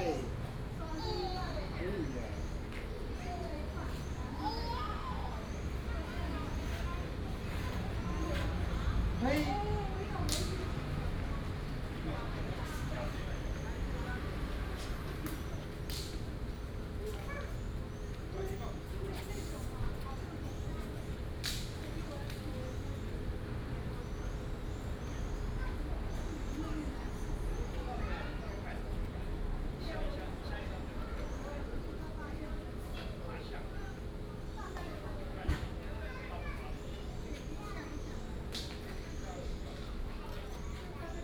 法治公園, Da’an Dist., Taipei City - in the Park
in the Park, The elderly and children